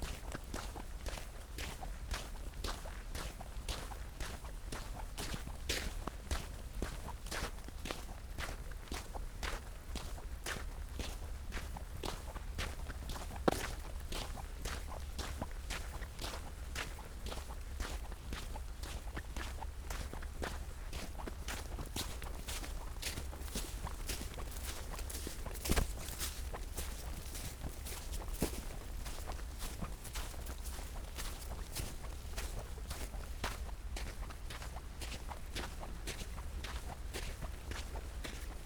Berlin Karow, walking along river Panke, snow in the air and on the ground
(Sony PCM D50, DPA4060)
Berlin, Germany, February 2019